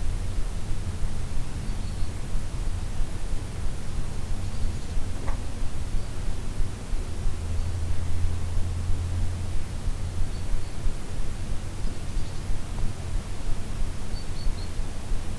Projekt „Dźwięk zastygły w czasie” jest twórczym poszukiwaniem w muzyce narzędzi do wydobycia i zmaterializowania dźwięku zaklętego w historii, krajobrazie, architekturze piastowskich zamków Dolnego Śląska. Projekt dofinansowany ze środków Ministerstwa Kultury i Dziedzictwa Narodowego.
Płonina, Poland